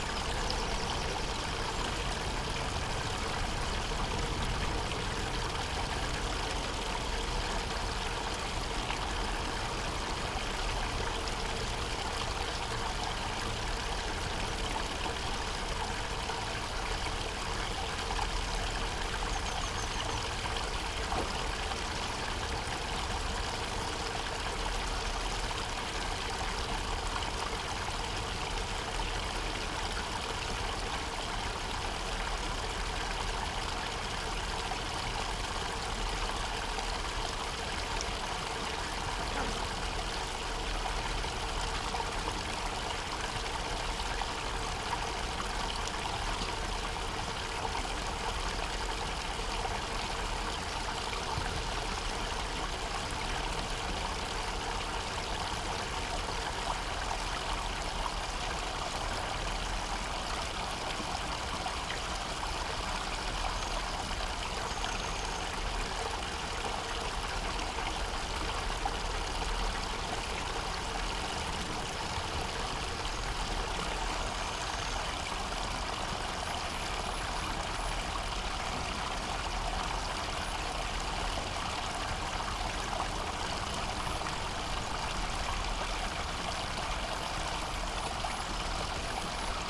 lock at Castle Mill Stream, Oxford, UK - water overrun
lock for narrowboats, water overrun, Castle Mill Stream.
(Sony PCM D50)